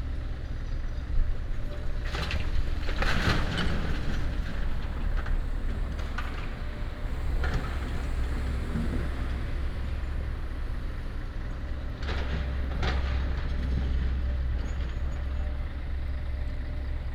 空軍十村, 新竹市東區 - Demolition of old house
Demolition of old house, traffic sound, Binaural recordings, Sony PCM D100+ Soundman OKM II
East District, Hsinchu City, Taiwan, October 2017